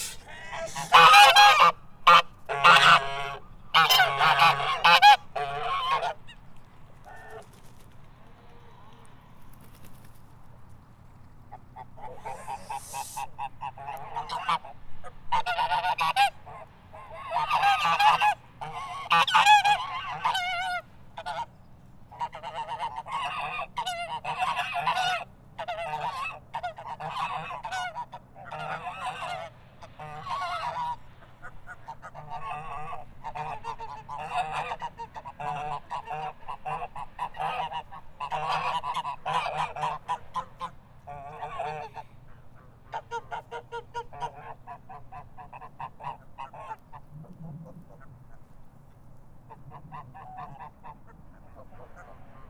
Quartier des Bruyères, Ottignies-Louvain-la-Neuve, Belgique - Unhappy geese
Near the lake, geese are unhappy of my presence. Fshhhh !
Ottignies-Louvain-la-Neuve, Belgium